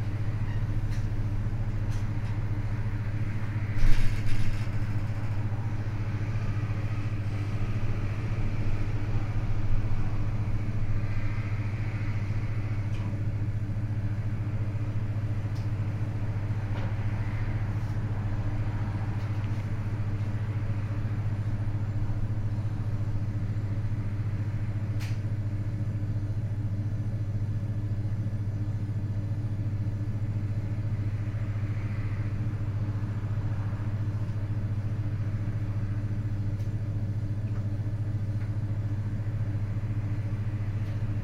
garage sounds, Chickerell, Dorset, UK
1 May 2014